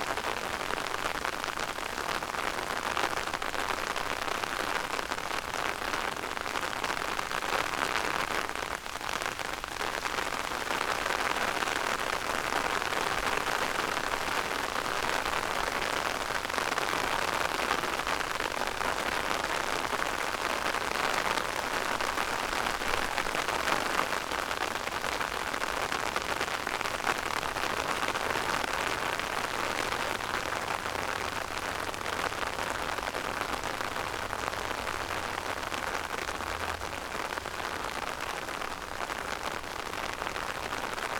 path of seasons, vineyard, piramida - rain on umbrella